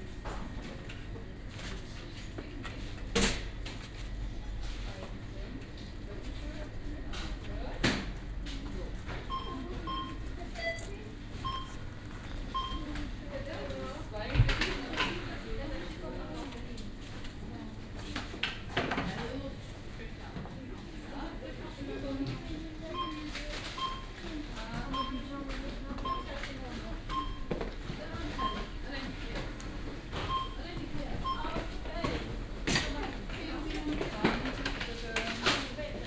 Neukölln, Berlin, Deutschland - Aldi supermarket
Ambience at Aldi supermarket, Kottbusser Damm. This was one of the cheap and ugly discounters, it was frequented by all sort of people and nationalities. It closed its doors in June 2012.